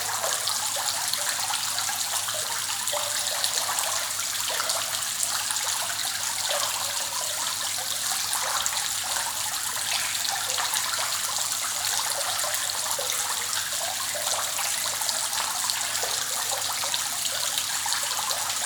Paris, rue de la villette, fontaine d'intérieur - 36-42 rue de la villette, Paris, fontaine d'intérieur
France, Paris, Fontain, water, hall